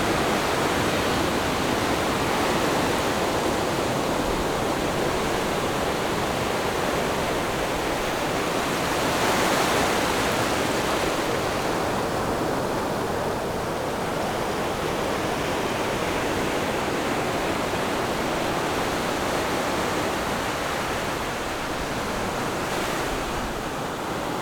五結鄉季新村, Yilan County - the waves
In the beach, Sound of the waves
Zoom H6 MS+ Rode NT4